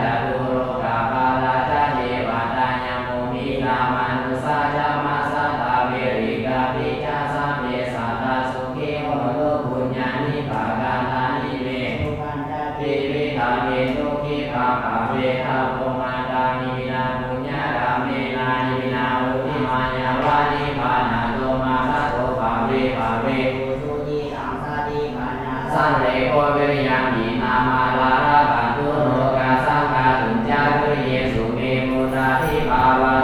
Luang Prabang, Wat Mai, Ceremony
Luang Prabang, Laos